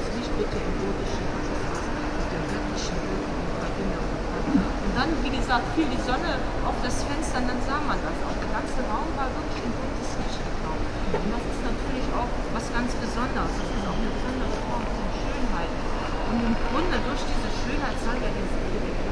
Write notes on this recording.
inside the dom cathedrale in the early afternoon. a guard explains the new richter window to a group of older people